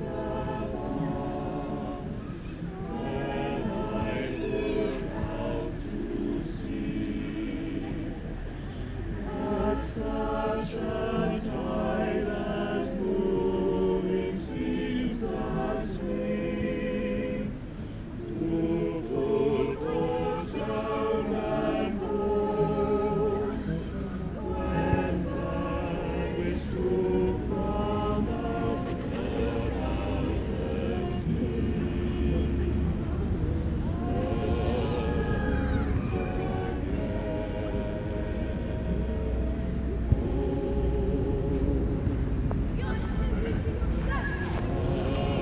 Some ambient sound from a sunny day at the beginning of autumn in the park. Lots of nice variation of sounds, people talking, walking, kids playing, birds, and a choir practicing.
Recorded using the internal XY + Omni mics on the Roland R26
1 September 2020, 18:45